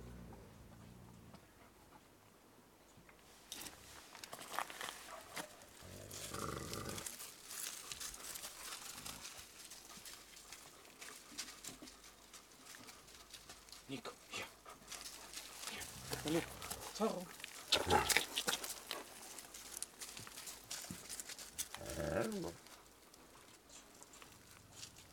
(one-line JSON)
{"title": "Longyearbyen, Svalbard and Jan Mayen - Stefano´s dog kennel", "date": "2011-10-17 08:10:00", "description": "A morning in the kennel where tourguide Stefano has his Greenland dogs.", "latitude": "78.22", "longitude": "15.67", "timezone": "Arctic/Longyearbyen"}